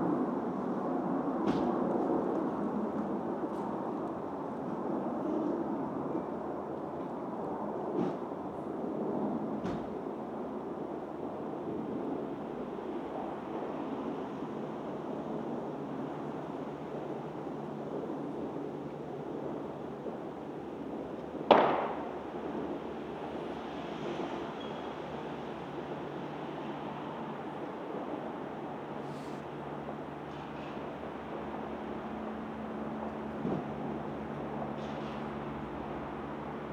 London, UK - Fireworks 05 November
Fireworks recording on 05 November around 19:30; recorded with Roland R44e + USI Pro.
5 November 2016